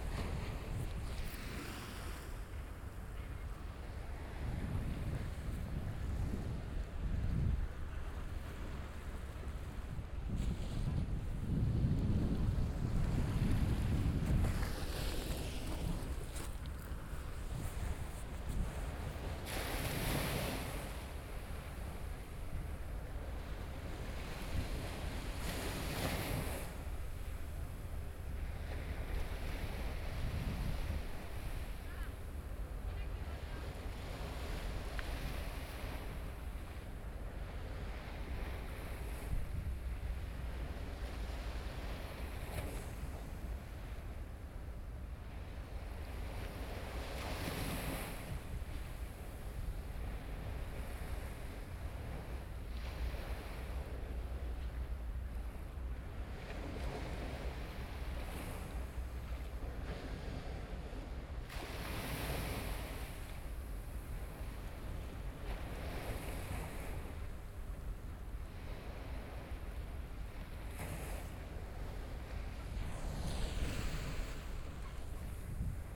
Barcelona: Beach in november
beach, november, waves, barcelona, people, talking
Barcelona, Spain